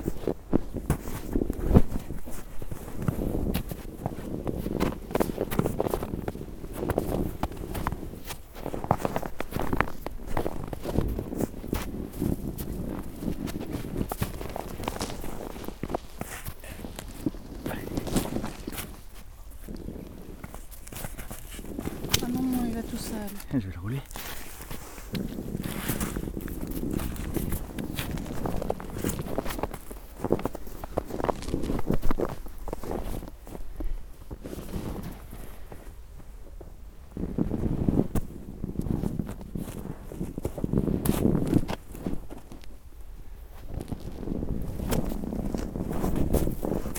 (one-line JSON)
{"title": "Court-St.-Étienne, Belgique - Rolling a snowball", "date": "2015-01-24 10:00:00", "description": "Rolling a very very big snowball in a pasture.", "latitude": "50.65", "longitude": "4.55", "altitude": "108", "timezone": "Europe/Brussels"}